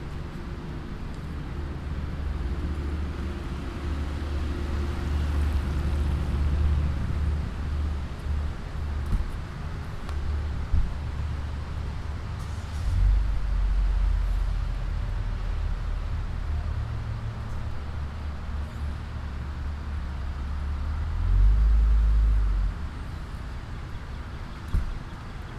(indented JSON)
{
  "title": "Hancock, MD, USA - Sideling Hill Information Center",
  "date": "2012-08-17 17:17:00",
  "description": "Sideling Hill Information Center\nTraveling west on a foggy day",
  "latitude": "39.72",
  "longitude": "-78.28",
  "altitude": "374",
  "timezone": "America/New_York"
}